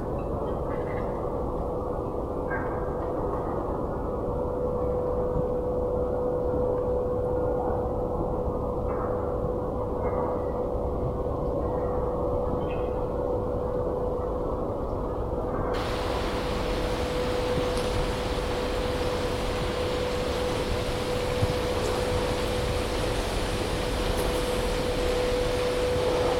Unnamed Road, Костянтинівка, Донецька область, Украина - Промзона Константиновки
Звуки промышленного производства, ветер в деревьях и звуки птиц
Записано на Zoom H2n
Kostiantynivka, Donetska oblast, Ukraine, 10 August, 07:42